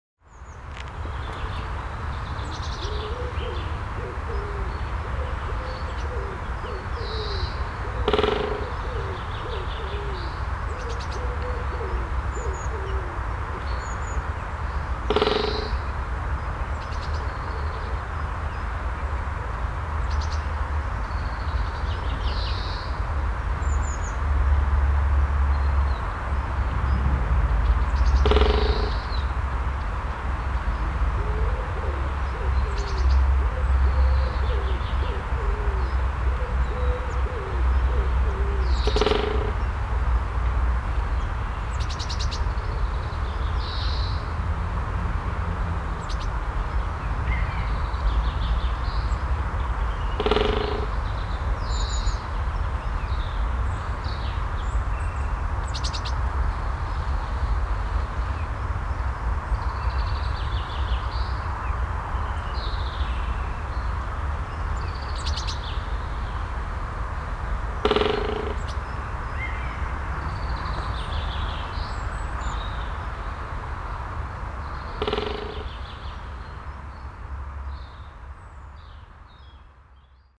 ratingen, frommeskothen, waldfriedhof 02
specht mittags, im hintergrund verkehrsgeräusche der autobahn 44
soundmap nrw:
social ambiences/ listen to the people - in & outdoor nearfield recordings